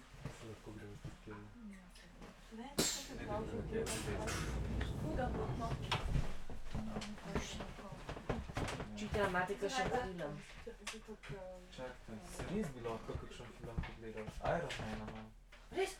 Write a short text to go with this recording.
together with a group of youngsters in the train to Maribor, waiting for departure. train staff changes here, the austrians have left and the slowenians take over. (tech: SD702, Audio Technica BP4025)